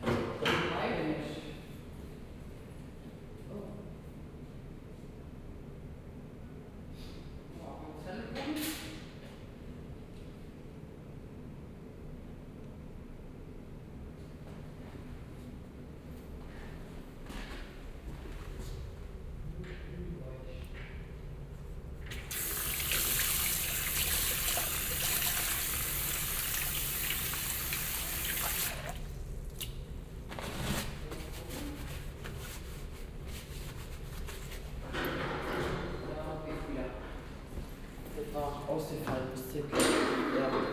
quick exploration of a city wc, moving in from the outside souvenir stand. a short electric blackout blocked the exit for a while.
Berlin, Deutschland, 7 September 2010